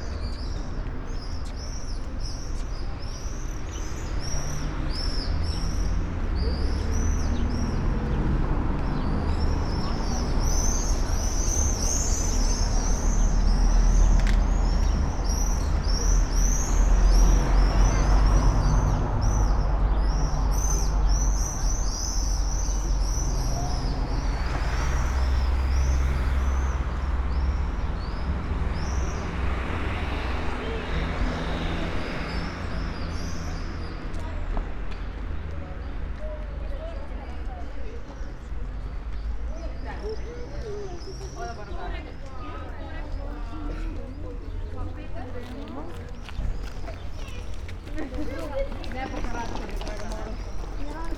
ulica heroja Tomšiča, maribor, slovenija - swifts, walkers
summer evening, swifts, walkers, steps, spoken words ....